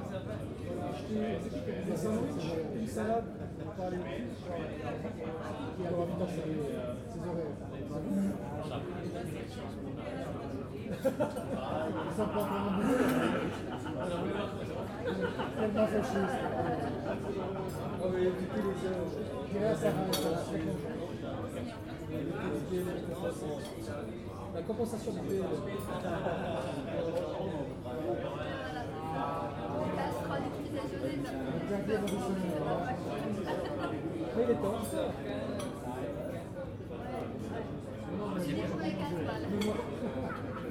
Gäste unterhalten sich, Teller klappern, Kellner servieren Essen und Getränke |
conversations among guests, dinnerware rattle, waiter serving food and drinks
Deutschland, European Union